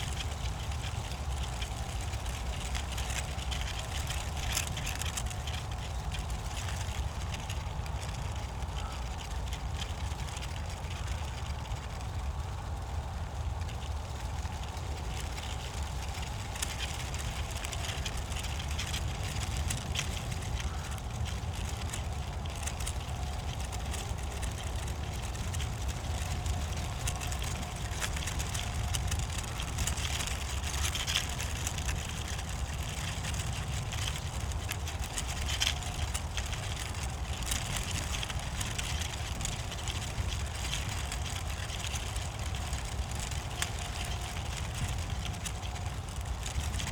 Tempelhofer Feld, Berlin, Deutschland - dry leaves in the wind
sound of dry oak leaves in the wind and traffic hum of the nearby motorway, on a bright winter day, Tempelhof, old airport area.
(SD702, AT BP4025)